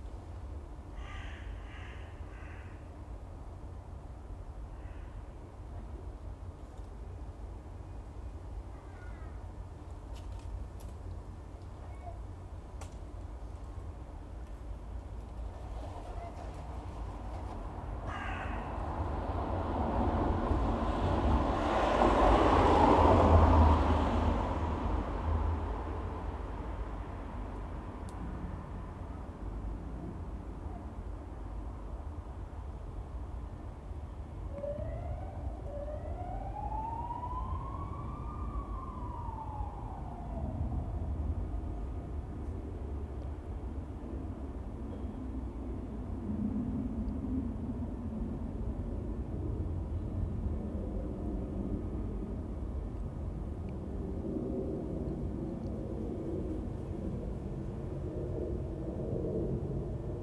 Sint-Jans-Molenbeek, Belgium - Verheyden walk3 quiet corner opposite ChiShaSahara bar
At this point in the corner of 2 walls the sounds of the busy Weststation are no longer distinguishable from the general Brussels background. Here the soundscape is quiet, only a few passing cars and a crow circling.